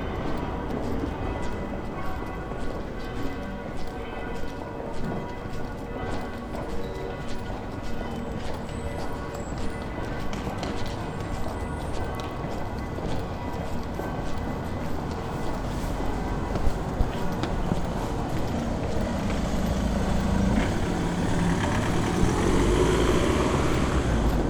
Náměstí Plzeň, Česká republika - Zvukové panoráma náměstí a interiér chrámu
V chrámu sv. Bartoloměje, na věži a na náměstí.
Plzeň, Czech Republic, 27 February 2014